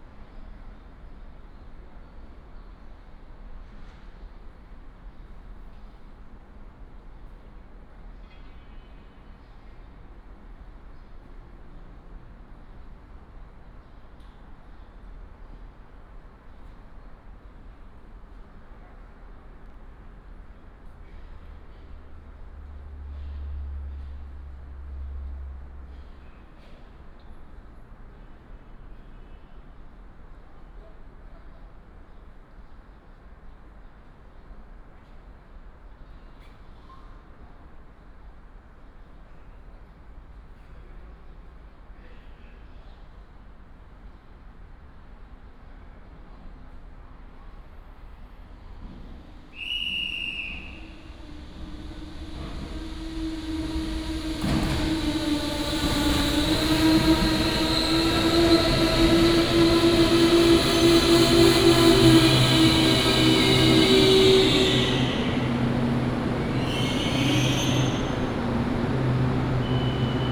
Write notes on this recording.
At the station platform, The train arrives, Walk into the car